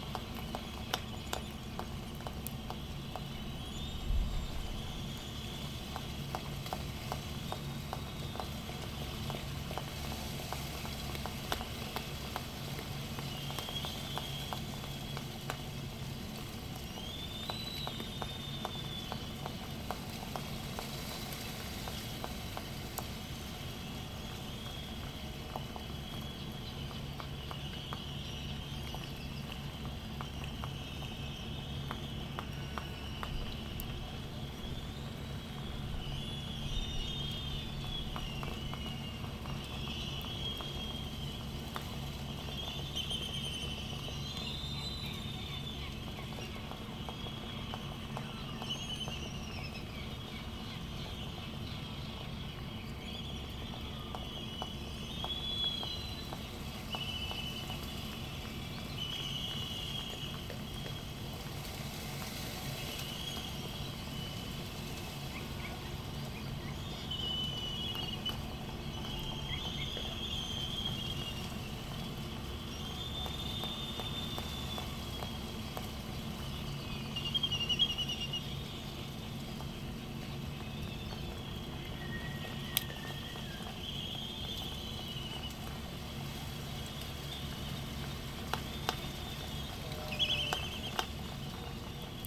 United States Minor Outlying Islands - Laysan albatross soundscape ...
Sand Island ... Midway Atoll ... soundscape with laysan albatross ... canaries ... white terns ... black noddy ... Sony ECM 959 one point stereo mic to Sony minidisk ... background noise ...
25 December 1997, 10:50